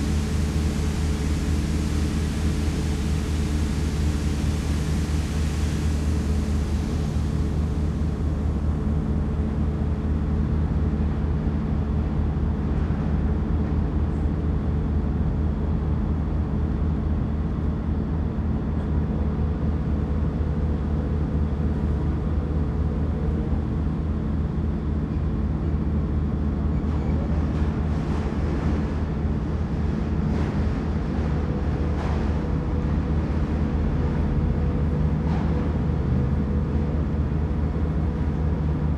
berlin: friedelstraße - the city, the country & me: sewer works
generator of a mobile concrete plant
the city, the country & me: november 1, 2013
Berlin, Germany, 1 November 2013